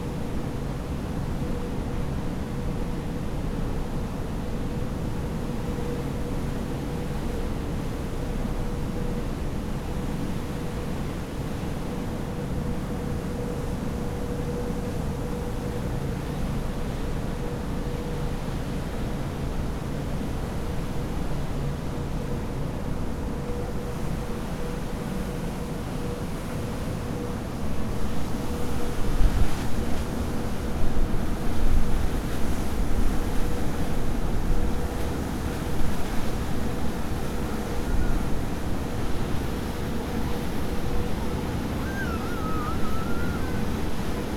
Europa - Fairy to Norway
The recording is of an engine, that is not only nice to listen to but also makes quite a show, as it pumps alot of Water into the air.